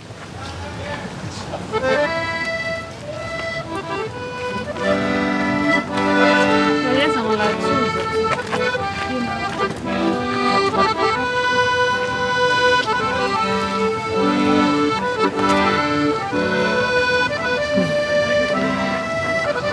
A busker, Cory Blakslee, plays the accordeon close to the entrance to the Neukölln flea market.
Sunny Sunday, summer has just started, after a humid midsummer night.
People passing by chatting, between the musician and the microphone.
Recorded on a Sony PCM100